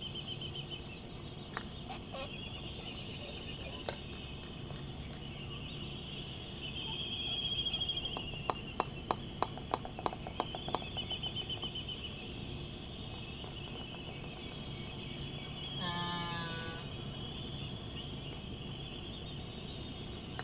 {"title": "United States Minor Outlying Islands - Laysan albatross dancing ...", "date": "1997-12-25 10:15:00", "description": "Sand Island ... Midway Atoll ... laysan albatross dancing ... Sony ECM 959 stereo one point mic to Sony Minidisk ... background noise ...", "latitude": "28.22", "longitude": "-177.38", "altitude": "9", "timezone": "Pacific/Midway"}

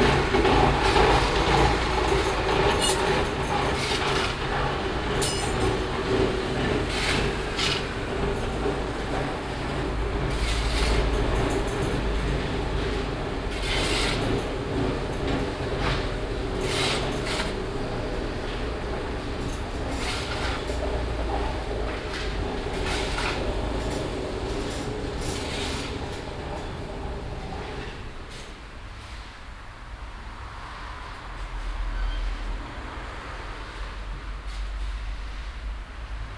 {"title": "cologne, gladbacherstrasse, hausbaustelle", "date": "2008-09-20 11:53:00", "description": "lastaufzug an gebäude, mechanik des herauffahrens, strassenverkehr\nsoundmap nrw:\nprojekt :resonanzen - social ambiences/ listen to the people - in & outdoor nearfield recordings", "latitude": "50.95", "longitude": "6.94", "altitude": "48", "timezone": "Europe/Berlin"}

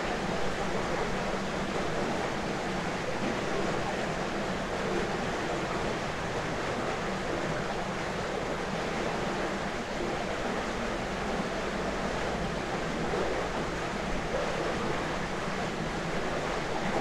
{"title": "Kelmė, Lithuania, at watermills dam - Kelmė, Lithuania, former watermills dam", "date": "2019-07-23 13:30:00", "description": "microphones on boards covering dam", "latitude": "55.63", "longitude": "22.94", "altitude": "114", "timezone": "Europe/Vilnius"}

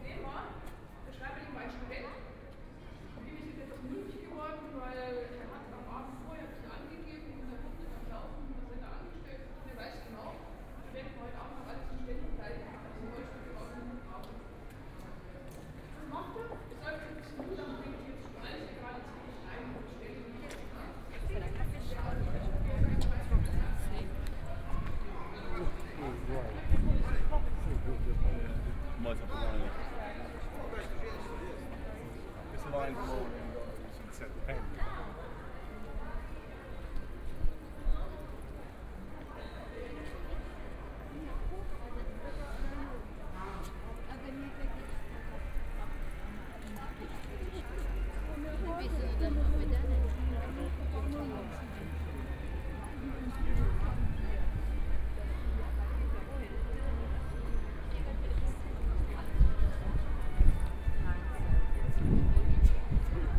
{"title": "Max-Joseph-Platz, Munich, Germany - Navigation", "date": "2014-05-11 12:38:00", "description": "Walking through the different buildings and streets, Pedestrians and tourists, Navigation", "latitude": "48.14", "longitude": "11.58", "altitude": "527", "timezone": "Europe/Berlin"}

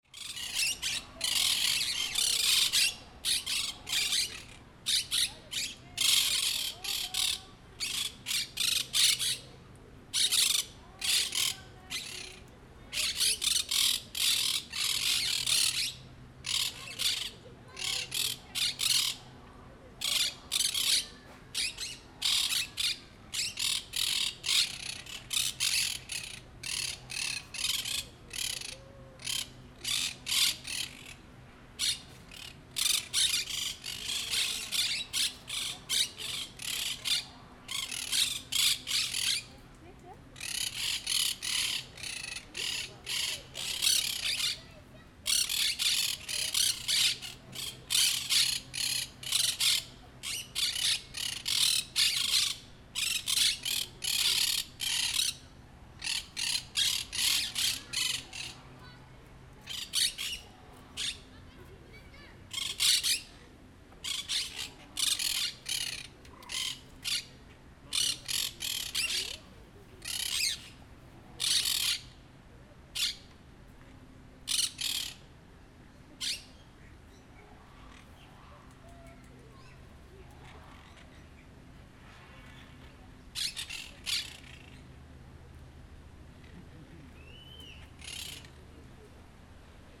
{"title": "Jardin des Plantes, Paris, France - Perruche Souris", "date": "2014-08-18 12:15:00", "description": "Recording of Monk Parakeets singing at Jardin des Plantes.\nPerruche Souris (Myiopsitta monachus)", "latitude": "48.85", "longitude": "2.36", "altitude": "36", "timezone": "Europe/Paris"}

